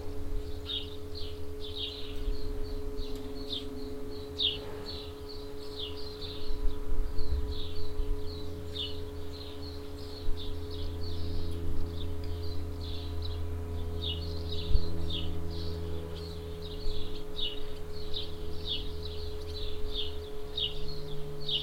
merscheid, barn yard
On a barn yard near a bigger cow shed. The sound of a generator, the moving animals in the shed, swallows flying around and a plane passing the sky.
Merscheid, Scheune
An einer Scheune in der Nähe einer größeren Kuhherde. Das Geräusch von einem Generator, die sich bewegenden Tiere in der Herde, Schwalben fliegen umher und ein Flugzeug überquert den Himmel.
Merscheid, basse-cour
Dans une basse-cour, à proximité d’une étable à vaches. Le bruit d’un générateur, les animaux qui bougent dans l’étable, des hirondelles qui volent un peu partout et un avion qui traverse le ciel.
Project - Klangraum Our - topographic field recordings, sound objects and social ambiences